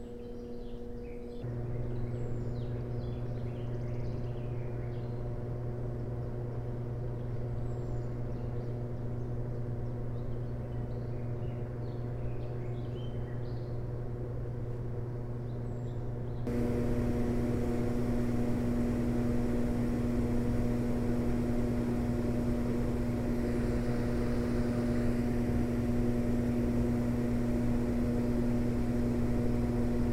Estonia oil shale mine ventilation intake
soundwalk around the building that houses the machines blowing in fresh air into the oil shale mine 70 metres under ground
Ida-Virumaa, Estonia, July 2010